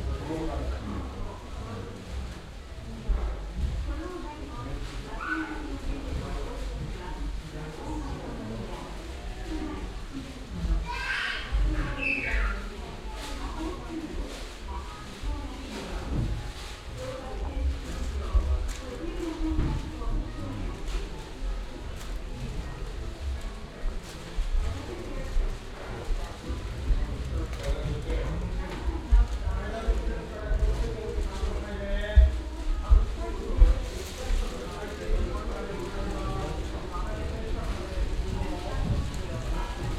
atmosphere inside the wooden castle. peoples bare feet on the wooden ground and the sound of plastic bags where they carry their shoes while talking
international city scapes - social ambiences
26 July 2010, ~09:00